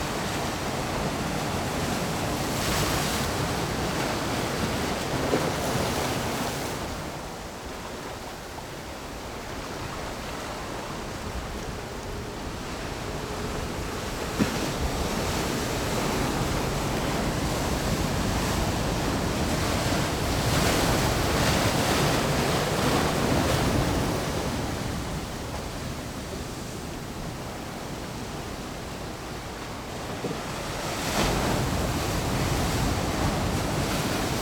頭城鎮外澳里, Yilan County - Sound of the waves
On the coast, Sound of the waves, Very hot weather
Zoom H6+ Rode NT4
7 July 2014, ~13:00